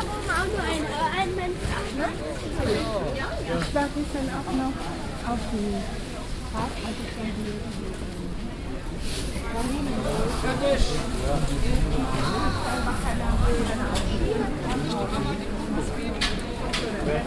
langenfeld, marktplatz, wochenmarkt
morgens auf dem wochenmarkt gang unter vordächern von verkaufsständen
soundmap nrw - sound in public spaces - in & outdoor nearfield recordings